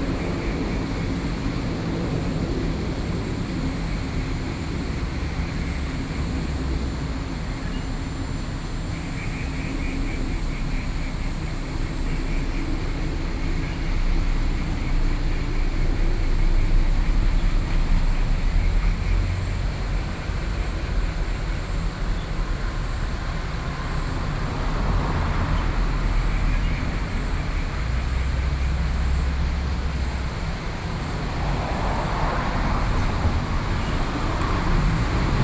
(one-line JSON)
{"title": "Sanxia, New Taipei City - Old abandoned house", "date": "2012-07-08 08:17:00", "latitude": "24.88", "longitude": "121.38", "altitude": "95", "timezone": "Asia/Taipei"}